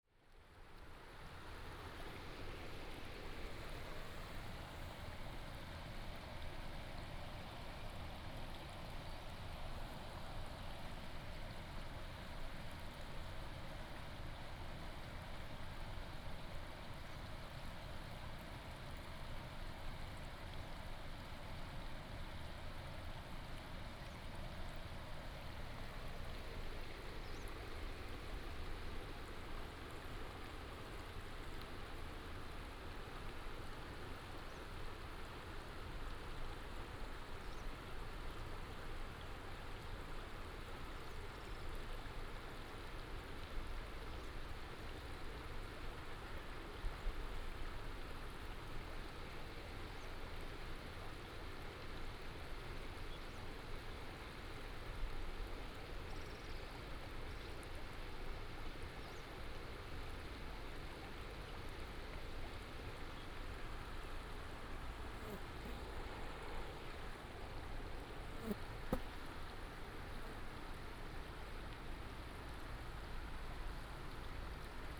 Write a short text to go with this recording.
On the river bank, Stream sound